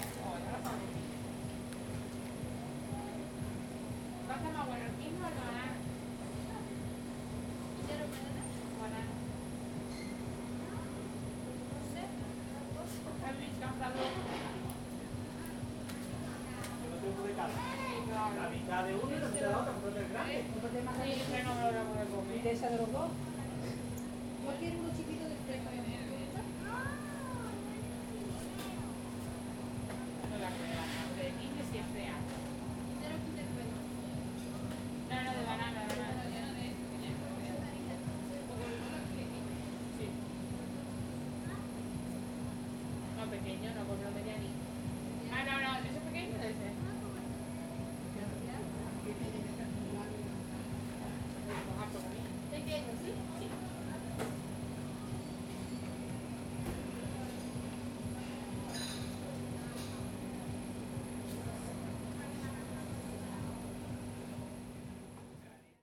Benalmádena, Prowincja Malaga, Hiszpania - Benalmadena Ice
Women chatting at a nearby ice cream stand. You can hear the fridge humming. Recorded with Zoom H2n.
Málaga, Spain